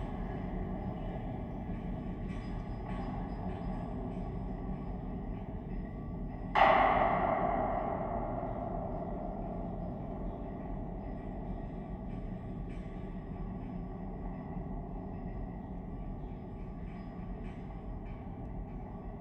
Old suspension bridge, Fort Calgary Park mainstays
mainstay cables of the small pedestrian suspension bridge recorded with contact mics